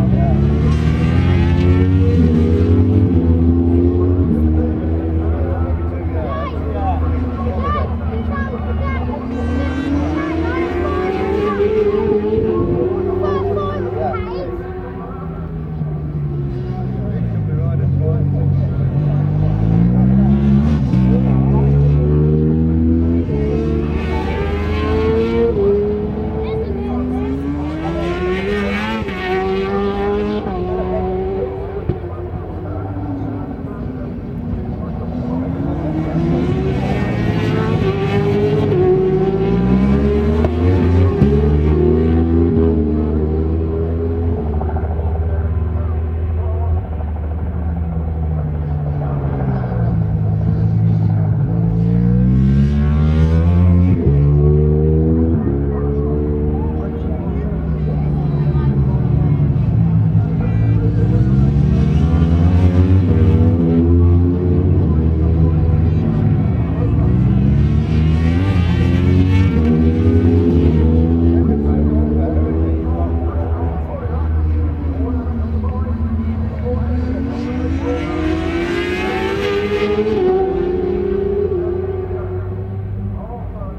BSB 2001 ... Superbikes ... Race 1 ... one point stereo mic to minidisk ... commentary ...
Norwich, United Kingdom, May 2001